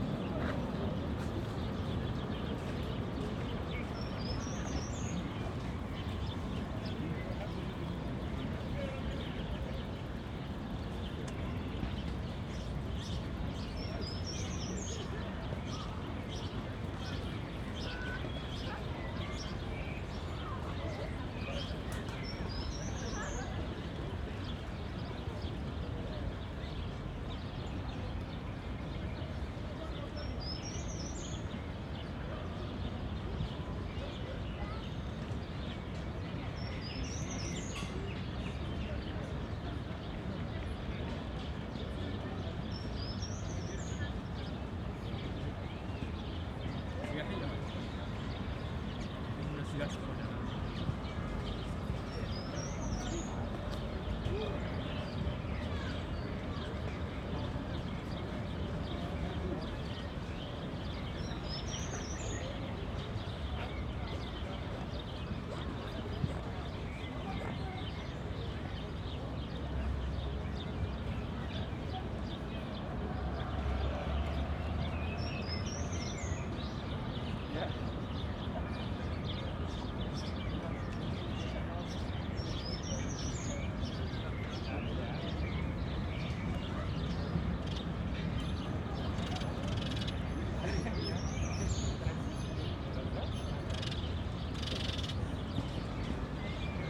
Urbanhafen, Kreuzberg, Berlin, Deutschland - Sunday evening ambience
Berlin, Urbahnhafen, Landwehrkanal, sunny Sunday evening ambience at the canal.
(SD702, AT BP4025)
Berlin, Germany, 10 May 2015, 18:25